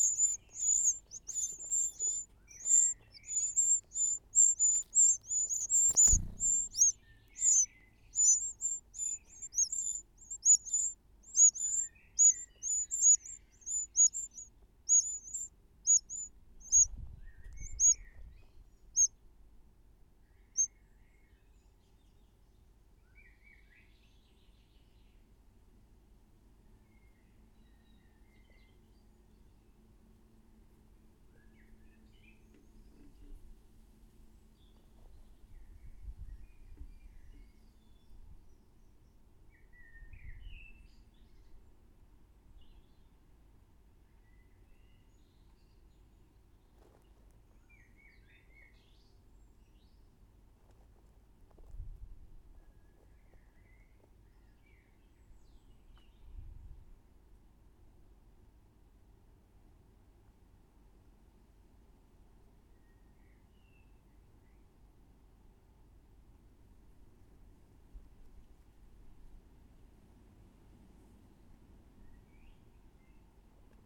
Wedmore, UK - A pair of wrens feed their young
Right in the corner of our terrace a pair of wrens have built a nest in the eaves of our porch. We can sit in the kitchen and watch their busy feeding schedule. I strung a pair of Roland binaural mics CS10-EM to an Olympus LS11. Each mic was about 3" either side of the nest and I left the rig there for a couple of hours. This is a short extract. No editing apart from extraction, fade in/out